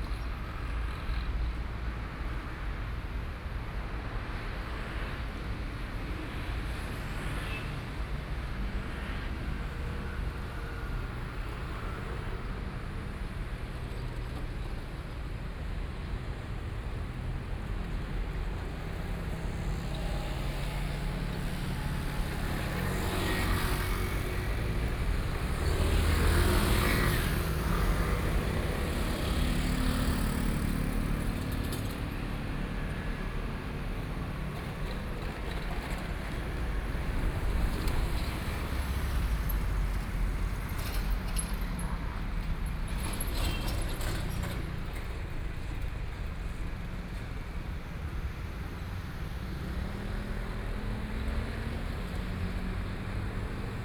Yuandong Rd., Neili - In front of the railroad crossing
Traffic Sound, Traveling by train, Binaural recording, Zoom H6+ Soundman OKM II
Zhongli City, Taoyuan County, Taiwan